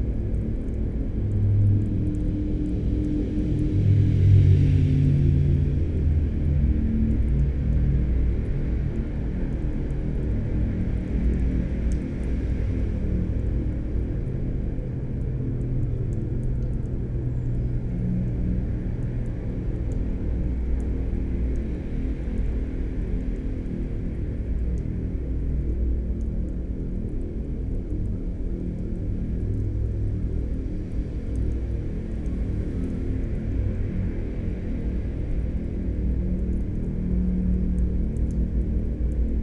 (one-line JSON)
{"title": "Střelecký ostrov, Prague - traffic in a rusty tube", "date": "2012-10-04 12:15:00", "description": "traffic on Most Legií bridge, heard from within a rusty tube on Střelecký ostrov island. recorded during the Sounds of Europe Radio Spaces workshop.\n(SD702, DPA4060)", "latitude": "50.08", "longitude": "14.41", "altitude": "191", "timezone": "Europe/Prague"}